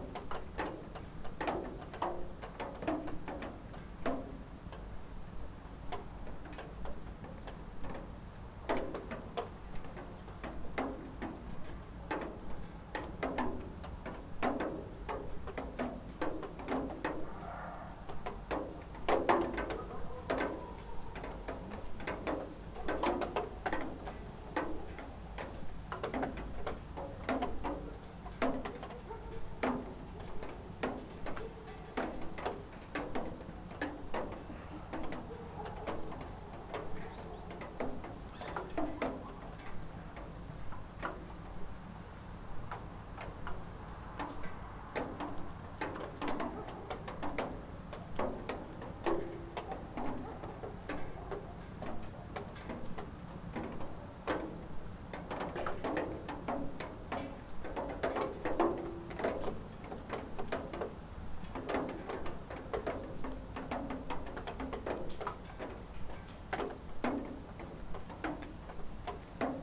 Wolbrom, Polska - Melting snow
Melting snow, handy recorder zoom h4n